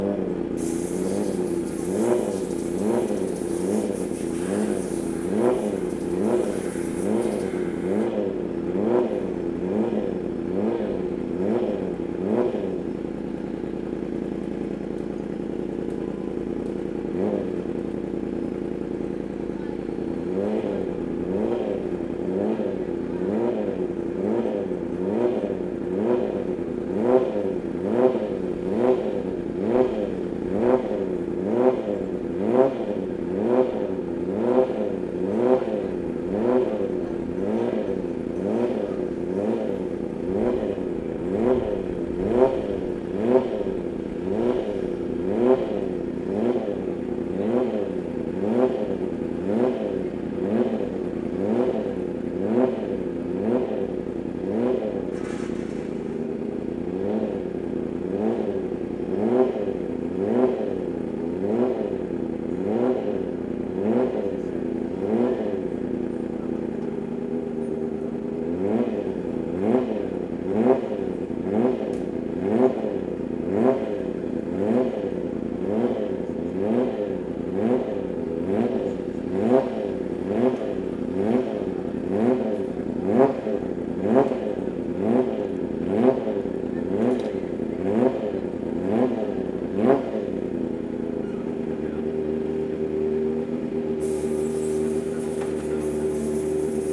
Silverstone Circuit, Towcester, UK - day of champions 2013 ... pit lane walkabout ...
day of champions ... silverstone ... rode lavaliers clipped to hat to ls 11 ...
East Midlands, England, United Kingdom